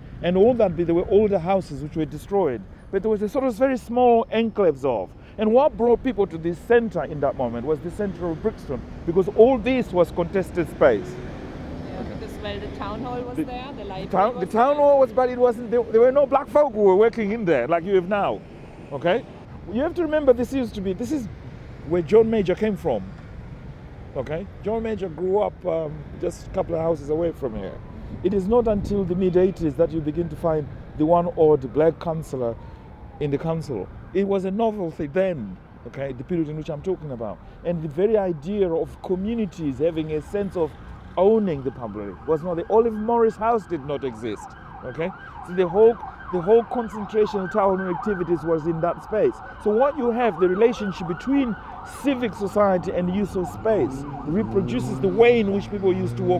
We are walking down Coldhabour Lane and across Brixton Market with the writer George Shire. He takes photographs. I fixed a bin-aural mic on his shirt… capturing his descriptions, memories and thoughts… an audio-walk through Brixton and its histories, the up-rise of black culture in the UK…
the recording is part of the NO-GO-Zones audio radio project and its collection: